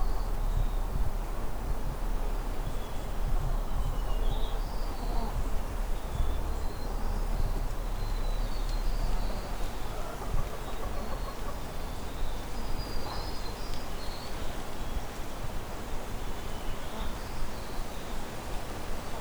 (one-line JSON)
{"title": "Ave, Sacramento, CA, USA - Sacramento Backyard 3-29-20", "date": "2020-03-29 16:00:00", "description": "Recorded on Zoom H5 in backyard of Library of MusicLandria, near flowering Ceanothus with bees, light rail train, and neighbor's chickens. My first time making a field recording.", "latitude": "38.55", "longitude": "-121.49", "altitude": "9", "timezone": "America/Los_Angeles"}